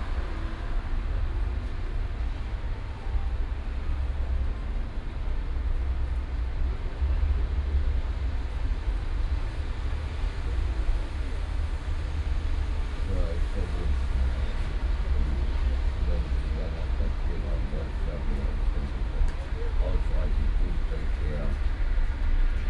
Löhrrondell, Herz-Jesu Kirche, Koblenz, Deutschland - Löhrrondell 5
Binaural recording of the square. Fifth of several recordings to describe the square acoustically. Here a homeless guy who is sitting in front of the church is starting a monologue. Rainy days, the sound of cars on the wet street. Sometimes you hear the rehearsal of an organ.
Koblenz, Germany, 19 May 2017